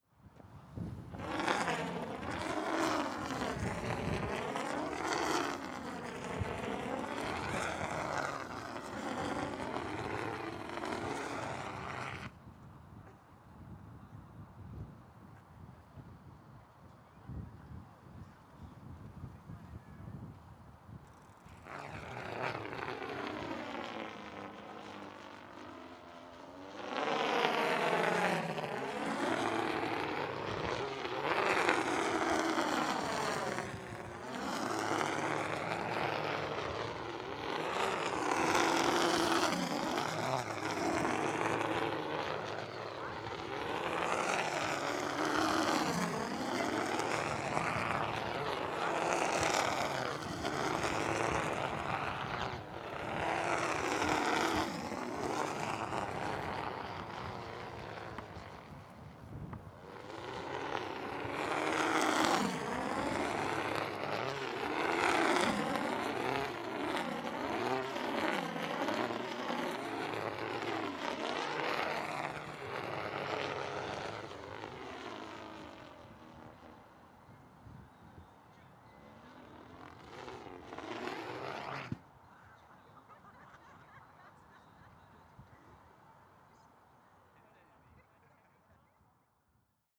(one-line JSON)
{"title": "Tempelhofer Feld, Berlin - noisy kite", "date": "2018-10-03 17:35:00", "description": "a kite is rattling in the wind (which unfortunately also hits the microphones)\n(Sony PCM D50)", "latitude": "52.48", "longitude": "13.40", "altitude": "47", "timezone": "Europe/Berlin"}